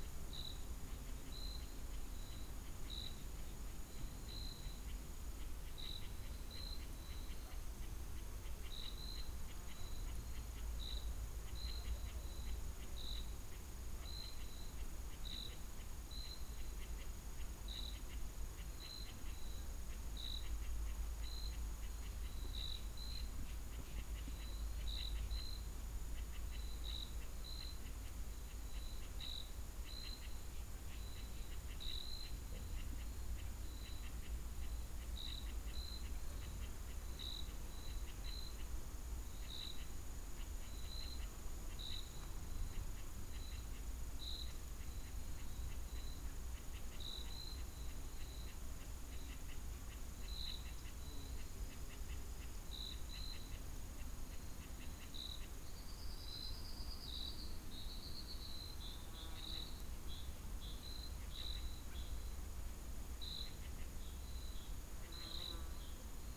La Angostura, Guanajuato, Mexico - Ambiente en La Angostura.
Atmosphere in La Angostura.
A little inhabited place.
I made this recording on march 13th, 2022, at 7:02 p.m.
I used a Tascam DR-05X with its built-in microphones and a Tascam WS-11 windshield.
Original Recording:
Type: Stereo
Un lugar poco habitado.
Esta grabación la hice el 13 de marzo de 2022 a las 19:02 horas.